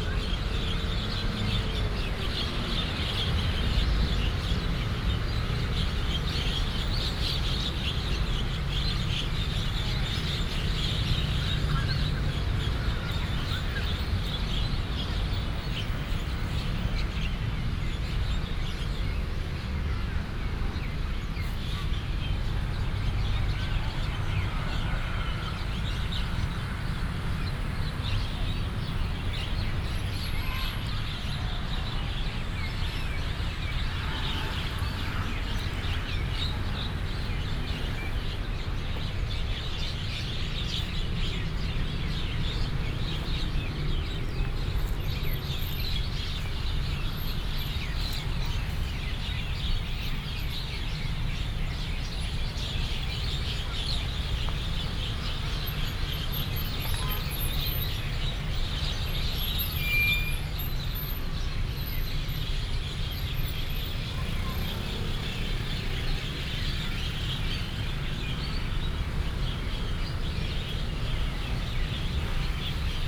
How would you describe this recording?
Walking in the park, Traffic sound, Bird call, Off hours, Binaural recordings, Sony PCM D100+ Soundman OKM II